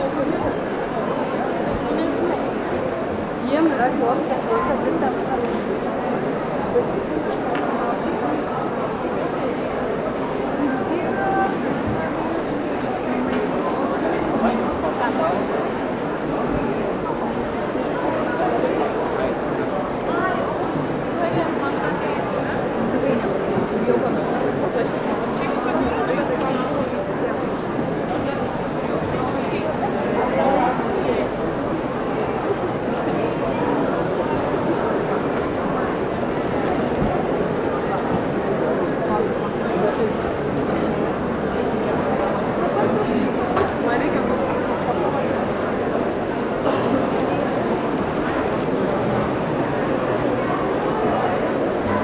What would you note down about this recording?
inside the main hall of Vilnius Book Fair, 2011.